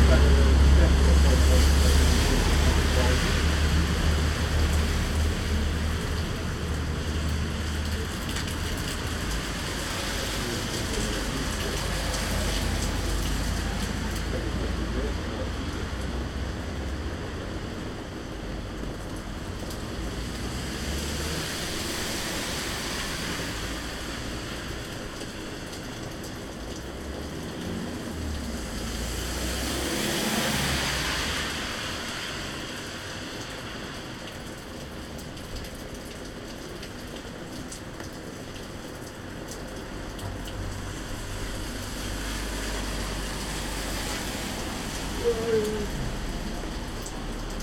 En terrasse, jour de pluie / Cornimont, France - En terrasse, jour de pluie.
Dans le cadre de l’appel à projet culturel du Parc naturel régional des Ballons des Vosges “Mon village et l’artiste”.